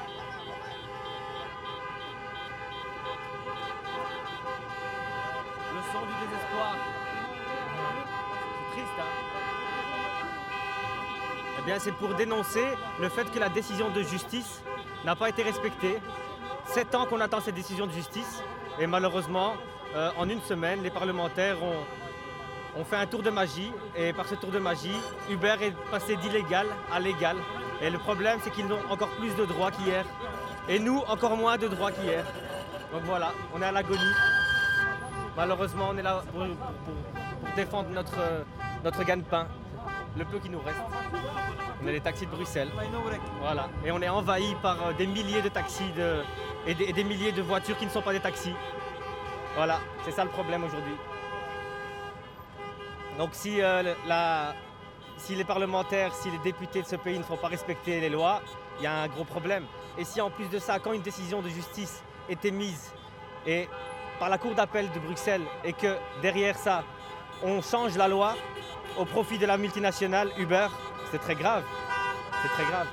Place Poelaert, Bruxelles, Belgique - Taxis demonstration
Taxis protesting against Uber service.
Multiple taxi cars on the place, horns, klaxons. Voice in the megaphone and interviwe of a driver.
Manifestation de taxis contre la plateforme Uber.
20 December 2021, 10:20